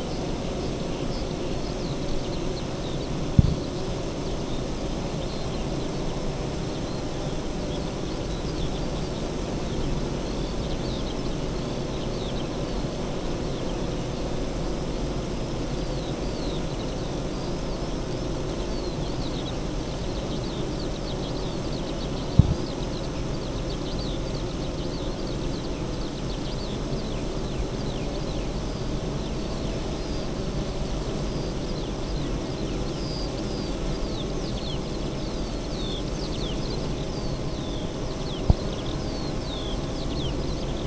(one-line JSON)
{"title": "Green Ln, Malton, UK - bee hives", "date": "2020-06-26 06:40:00", "description": "bee hives ... Zoom F6 to SASS ... eight hives in pairs ... SASS on ground facing a pair ... bird song ... skylark ...", "latitude": "54.13", "longitude": "-0.56", "altitude": "105", "timezone": "Europe/London"}